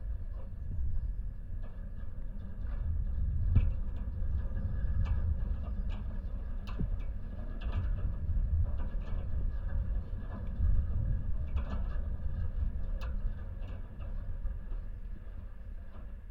Pasiliai, Lithuania, metallic fence
strong metallic fence quarding living area of european bisons. contact microphones recording
Panevėžio rajono savivaldybė, Panevėžio apskritis, Lietuva